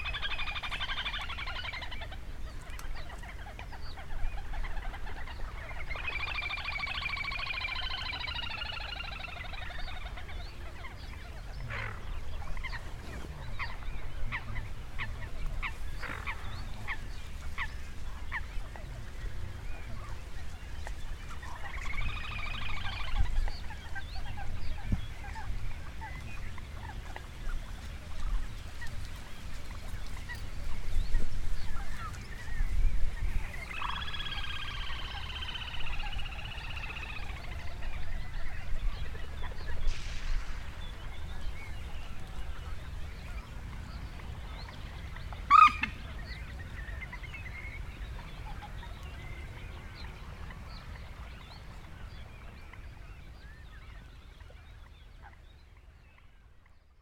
Ham Wall, Avalon Marshes - Quarrelling Coots and Booming Bitterns
A great afternoon at Ham Wall with Fran. No industrial noise. This track is a combination of two separate recordings. A homemade SASS (with Primo EM 172 capsules made up by Ian Brady of WSRS) to Olympus LS14 and a mono track from a MK66 to a FR2LE. A good pint afterwards at the Railway Inn served by the affable Ray